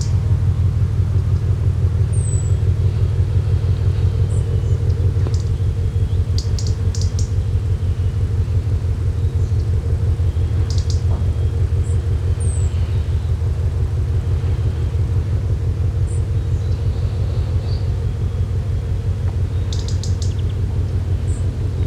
Sveio, Norwegen - Norway, Valevag, sheep meadow
Walking to direction Valevag. Standing near to a sheep meadow at a farm house, listening to a motor ship passing by on the Bomlafyord. Birds chirping in the bushes nearby.
international sound scapes - topographic field recordings and social ambiences